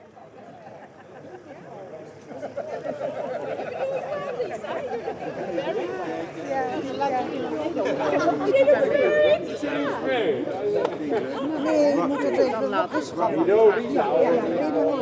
Maastricht, Pays-Bas - Uninvited to a wedding
Het Vagevuur. After a wedding, a small group of elderly people discuss. They are stilted. The atmosphere is soothing and warm.